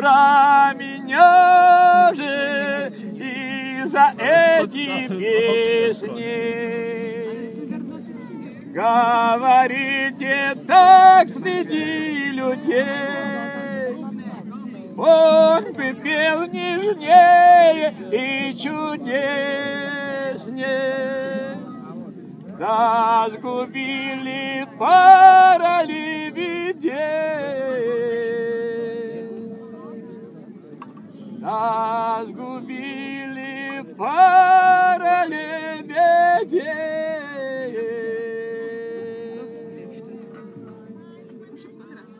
Obelių seniūnija, Lithuania - old russian man
old russian man
8 August